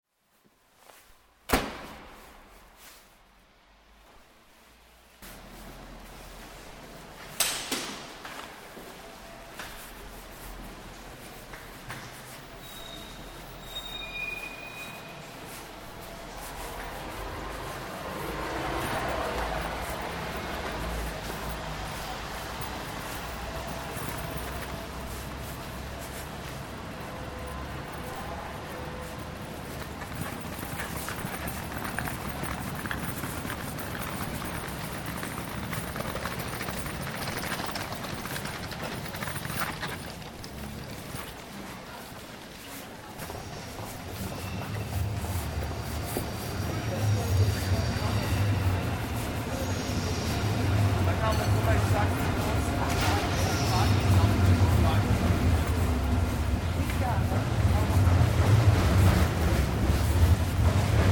Köln Hbf: in der Bahnhofstiefgarage, auf dem Weg zum Gleis - In der Bahnhofstiefgarage, auf dem Weg zum Gleis 4
Cologne Mainstation: in the garage, on the way to platform 4…
Cologne, Germany, 2 October